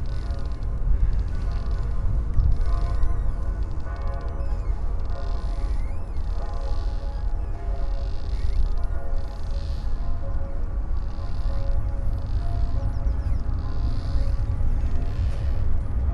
Galäparken, Stockholm, Ice & Bells
Ice sheets squeeking together with bells etc in background.
Stockholm, Sweden, 13 February, 11:22